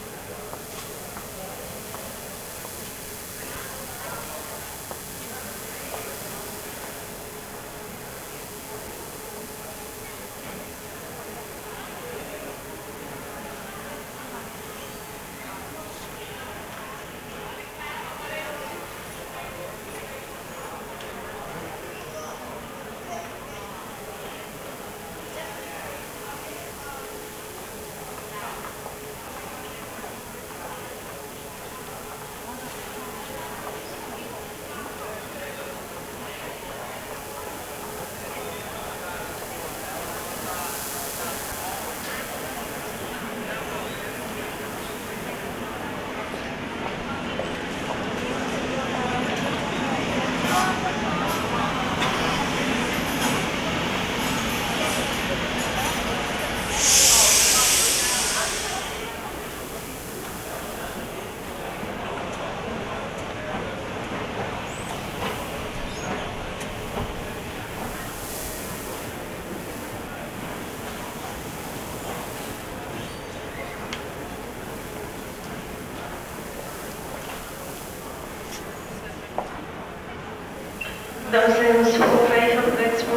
Brussels, Central Station, electric buzz
Electric buzz coming from the neon lights.
City of Brussels, Belgium, 17 August 2008